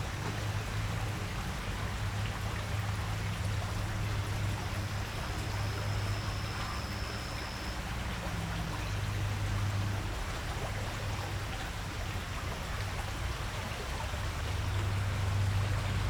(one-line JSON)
{"title": "二叭仔溪, Shuangcheng Rd., Xindian Dist. - The sound of water streams", "date": "2012-01-18 15:37:00", "description": "The sound of water streams, Traffic Sound\nZoom H4n+ Rode NT4", "latitude": "24.95", "longitude": "121.49", "altitude": "39", "timezone": "Asia/Taipei"}